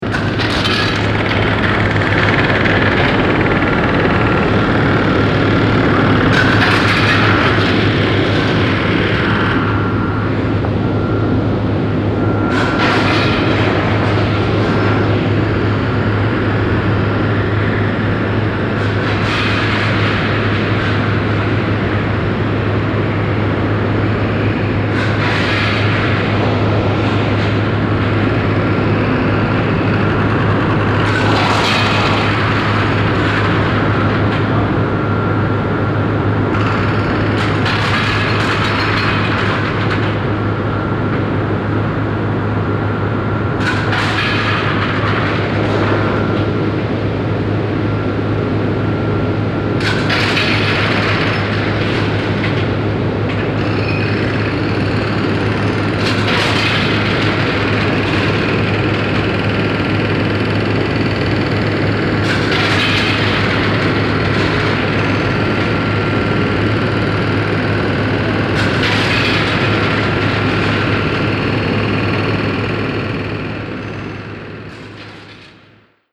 Lentzweiler, Wintger, Luxemburg - Eselborn, industry zone, foundry
An der Fertigungshalle einer Giesserei. Der Klang der Maschinen aus dem Inneren der Halle.
At a construction hall of a foundry. The sound of machines inside.